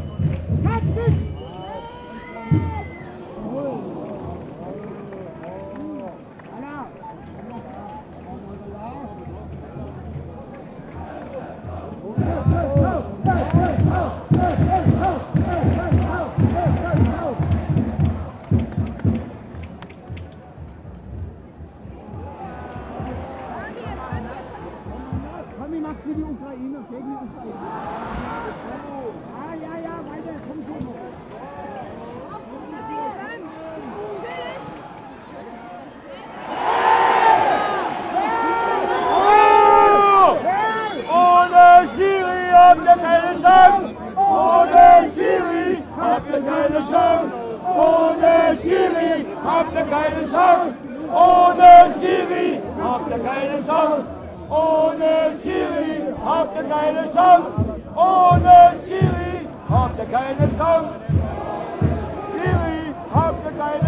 mainz: stadion am bruchweg - the city, the country & me: football stadium of fsv mainz 05, south stands
the last minutes of the match, hamburger sv scores a goal an wins 1-0 over mainz 05, the fans of mainz 05 call the scorer an asshole and the referee a cheat
the city, the country & me: october 16, 2010
Mainz, Deutschland, 16 October 2010